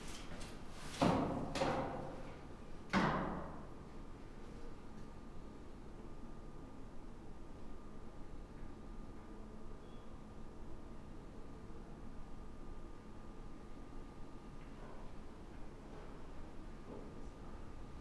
Alte City Pension, Rankestraße - morning staircase activity
tenants and hotel guests walking up and down the stairs, leaving the building for breakfast. someone still using the dail-up connection. rustle of winder jackets. so dominant and present yet hardly noticeable in the whole set of everyday sounds. one of many sounds we filter out i guess.